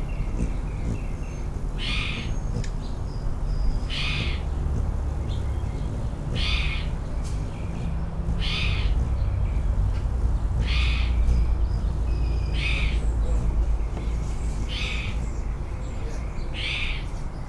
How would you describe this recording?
This morning I captured a whole slew of Sunday morning sounds including many hummingbirds, a neighbor and his dog, cars, planes, helicopters.